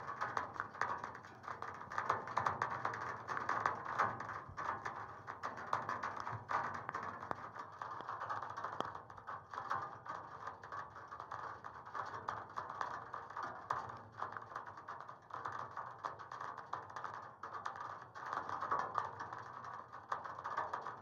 Utena, Lithuania, rain on a wire
rain on a single wire captured with contact microphones
Utenos apskritis, Lietuva, July 2019